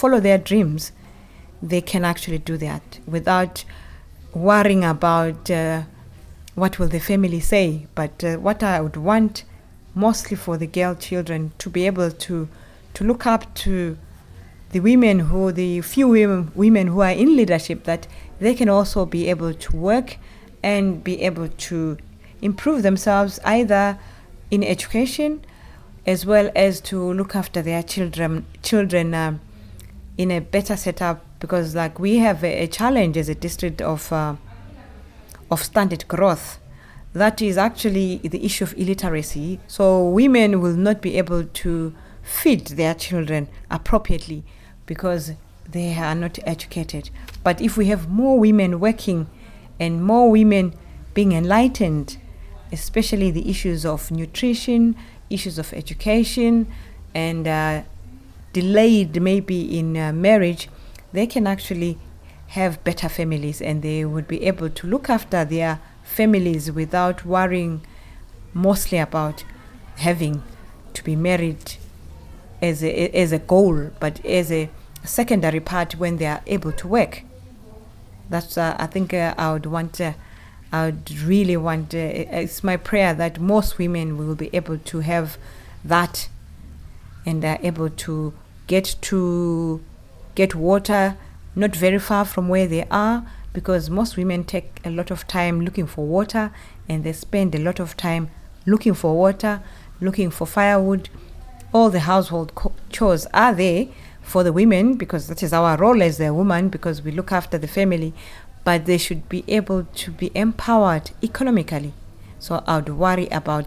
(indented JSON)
{
  "title": "The DA's Office, Binga, Zimbabwe - There's a purpose for me being here...",
  "date": "2016-05-31 15:05:00",
  "description": "Mrs. Lydia Banda Ndeti, the District Administrator for Binga, gives us detailed insights in to the daily lives and situations of the rural women in this remote part of the country. As a widow and single mother, she compassionately feels for the women and girls in her district and encourage women to support each other.",
  "latitude": "-17.62",
  "longitude": "27.34",
  "altitude": "628",
  "timezone": "Africa/Harare"
}